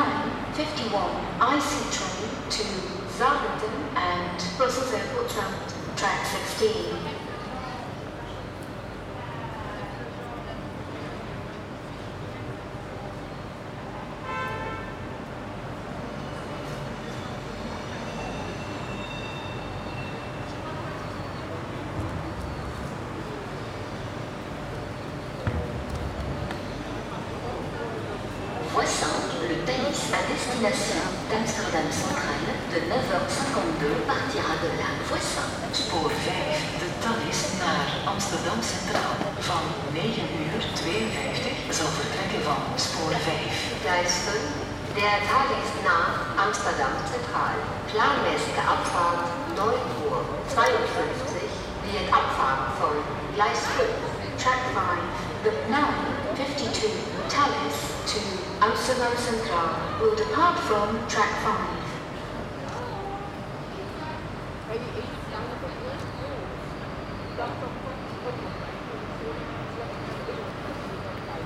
{"title": "Gare du Midi, Saint-Gilles, Belgique - Platform 3b ambience", "date": "2021-07-27 10:00:00", "description": "Synthetic voices for trains announcement, conversations on the platform, birqs nesting in the steel structure.\nTech Note : Sony PCM-D100 internal microphones, wide position.", "latitude": "50.83", "longitude": "4.33", "altitude": "27", "timezone": "Europe/Brussels"}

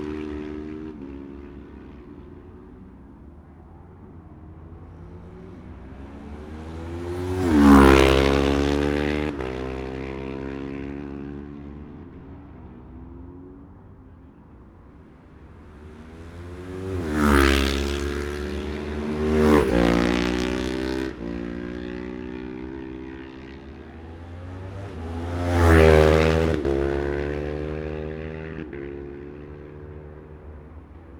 {"title": "Jacksons Ln, Scarborough, UK - Gold Cup 2020 ...", "date": "2020-09-11 11:25:00", "description": "Gold Cup 2020 ... Twins and 2 & 4 strokes practices ... Memorial Out ... Olympus LS14 integral mics ...", "latitude": "54.27", "longitude": "-0.41", "altitude": "144", "timezone": "Europe/London"}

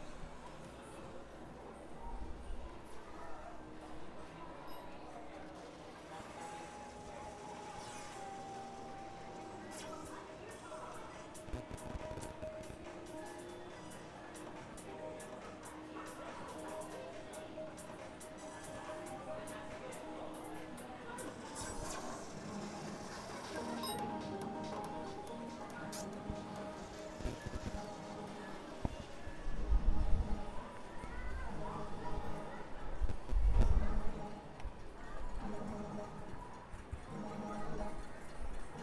{"title": "Rua Domingos Agostim - Cidade Mãe do Céu, São Paulo - SP, 03306-010, Brasil - AMBIÊNCIA PLAYLAND SHOPPING METRÔ TATUAPÉ", "date": "2019-04-11 17:30:00", "description": "AMBIÊNCIA NO PARQUE DE DIVERSÕES PLAYLAND NO SHOPPING METRÔ TATUAPÉ, COM GRAVADOR TASCAM DR40, REALIZADO NUM AMBIENTE FECHADO, COM NÚMERO REDUZIDO DE PESSOAS, EM MOVIMENTO E COM SONS DE BRINQUEDOS E JOGOS ELETRÔNICOS .", "latitude": "-23.54", "longitude": "-46.58", "altitude": "759", "timezone": "America/Sao_Paulo"}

